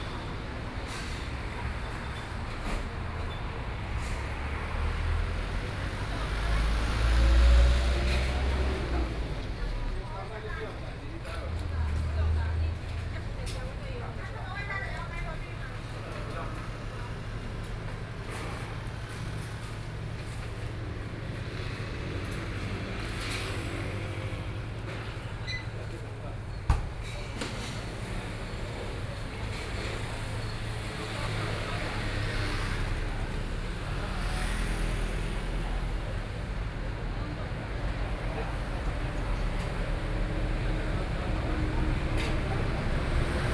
Zhonghua Rd., Beidou Township - Standing in front of the restaurant
In the roadside outside the restaurant, Traffic Sound, Binaural recordings, Zoom H6+ Soundman OKM II
Beidou Township, Changhua County, Taiwan, 23 December 2013